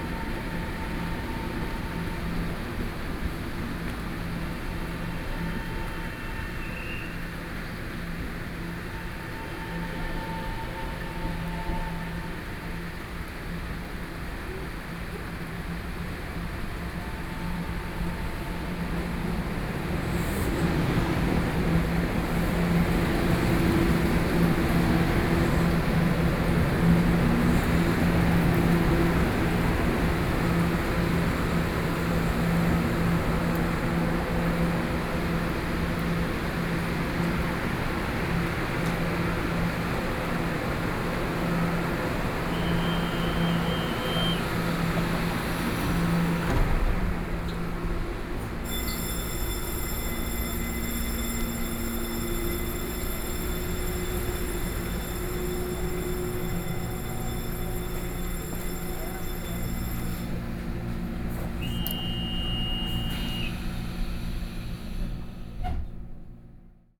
Yangmei Station - walk
Slowly walk into the platform from the station hall, Sony PCM D50 + Soundman OKM II
Taoyuan County, Taiwan, 14 August, 15:53